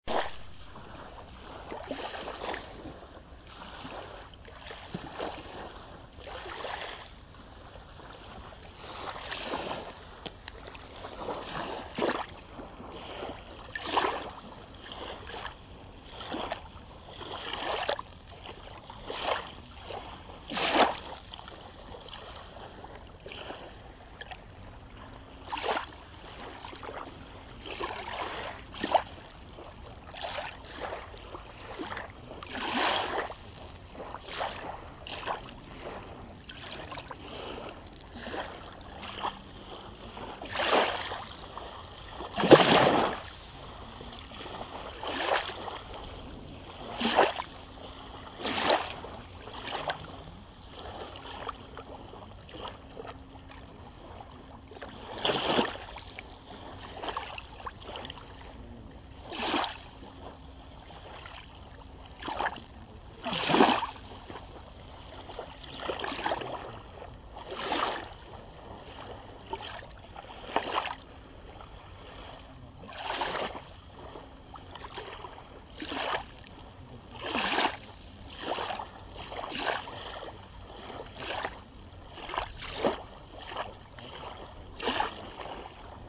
At Amager Strandpark, Copenhagens modern beach site, where the sand is of concrete and the view goes out to aeloic offshore power plants and incoming airplanes to Kastrup Airport, the waves are waves are waves.